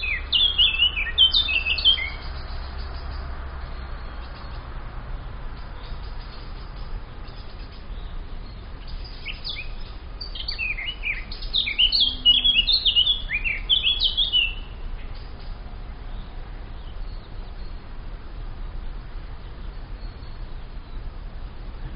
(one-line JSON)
{"title": "Buchet, Deutschland - Vogel am Waldrand / Bird next to the forest", "date": "2015-07-10 11:59:00", "latitude": "50.26", "longitude": "6.32", "altitude": "517", "timezone": "Europe/Berlin"}